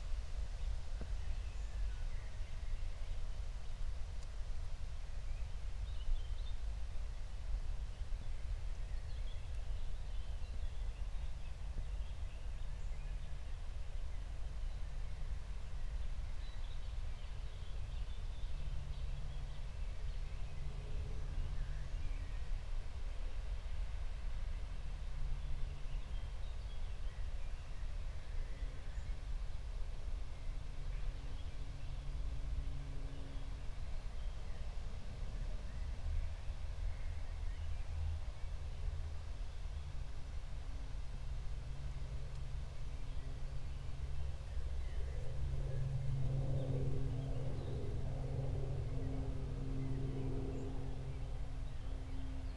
Berlin, Buch, Mittelbruch / Torfstich - wetland, nature reserve
20:00 Berlin, Buch, Mittelbruch / Torfstich 1